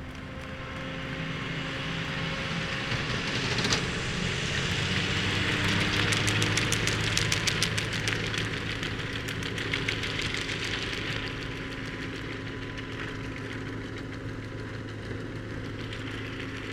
muck spreading ... two tractors at work ... dpa 4060s in parabolic to MixPre3 ... bird calls ... red-legged partridge ... pheasant ... meadow pipit ... crow ...
England, United Kingdom